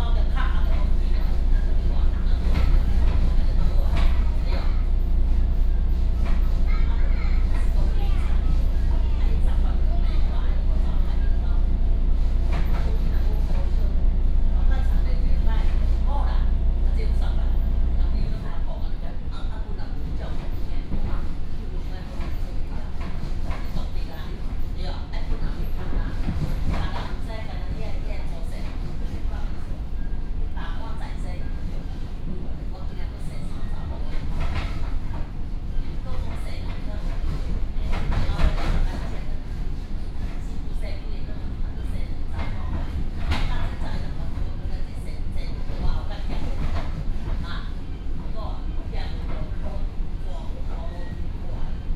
Coastal Line (TRA), from Longjing Station station to Shalu Station